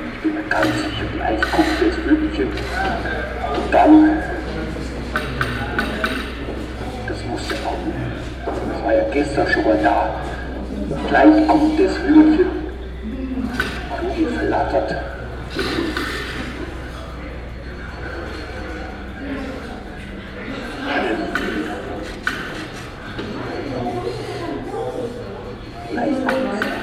Altstadt-Nord, Köln, Deutschland - Cologne, Museum Ludwig, machines by Andreas Fischer
Inside the museum in the basement area - during an exhibition of sound machines by artist Andreas Fischer.Here a bird house with a metal spiral and a small stick. In the backgound the sound of other machines and visitors.
soundmap nrw - social ambiences, topographic field recordings and art places